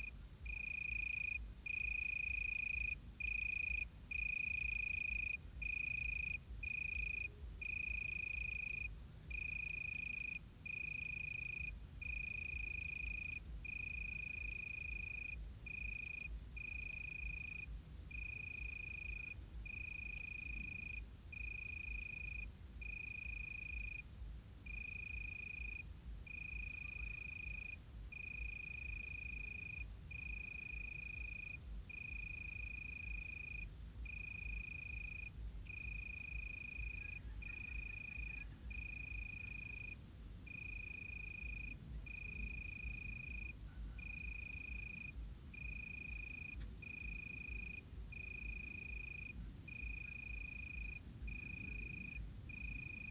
Solesmeser Str., Bad Berka, Deutschland - Suburban Germany: Crickets of Summer Nights 2022-No.2
Documenting acoustic phenomena of summer nights in Germany in the year 2022.
*Binaural. Headphones recommended for spatial immersion.